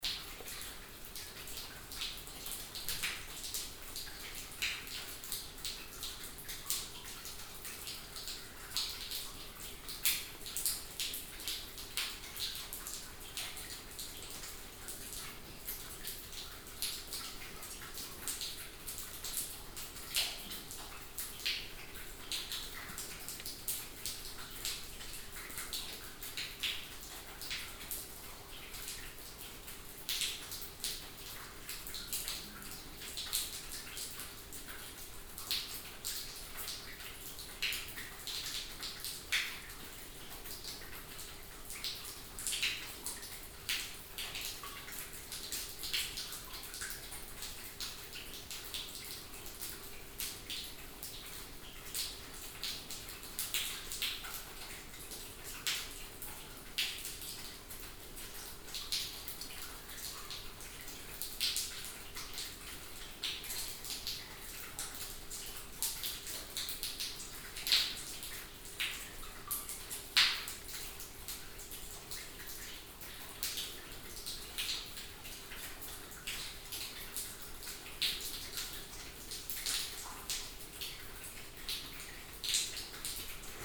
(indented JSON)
{
  "title": "stolzembourg, old copper mine, water dripping",
  "date": "2011-08-09 22:57:00",
  "description": "Inside a side drift of the mine tunnel. Water running from a higher level, which was former the way up to the shaft tower.\nStolzemburg, alte Kupfermine, tropfendes Wasser\nIn einem Seitengang des Minentunnels. Wasser rinnt von einem höherem Bereich, der einst den Weg hinauf zum Schachtturm bildete.\nStolzembourg, ancienne mine de cuivre, eau qui goutte\nA l’intérieur d’une galerie latérale du tunnel de la mine. De l’eau coule depuis le haut dans ce qui était le chemin vers le puits.\nProject - Klangraum Our - topographic field recordings, sound objects and social ambiences",
  "latitude": "49.97",
  "longitude": "6.16",
  "altitude": "285",
  "timezone": "Europe/Luxembourg"
}